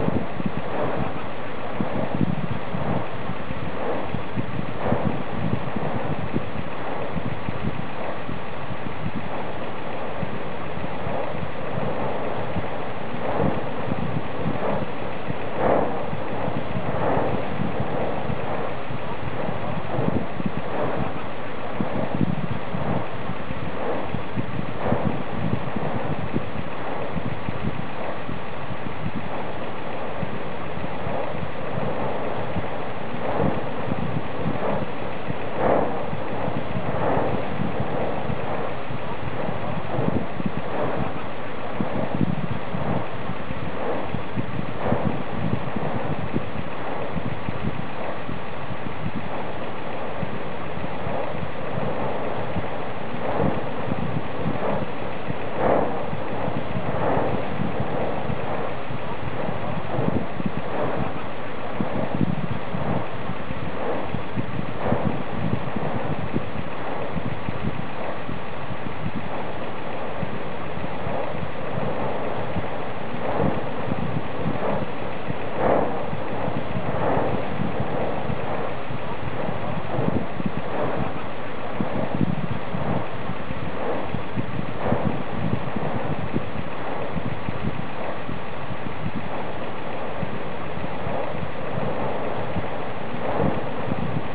Suðurland, Island - Volcanic Eruption on the Fimmvörduháls
This is the sound of the volcanic eruption on Fimmvörduhals at the Eyjafjallajökull volcano in march 2010.
Iceland